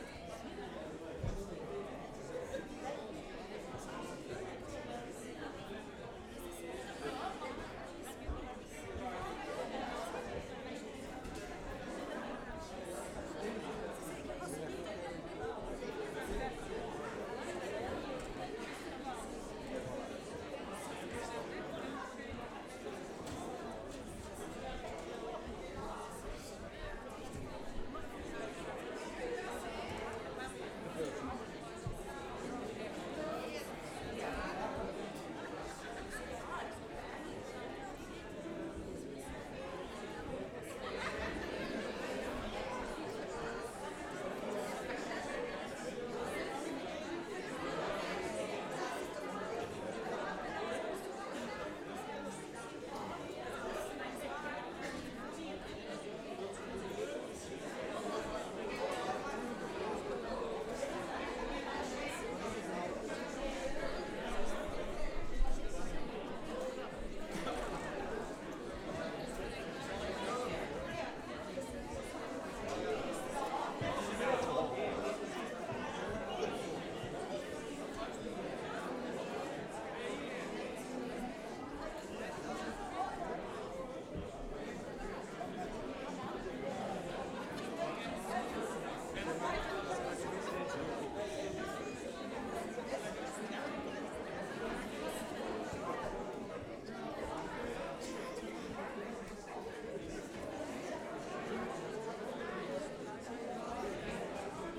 Antwerpen, België - entrance
public entering theatre
recording stops where the performance starts
10 May, ~20:00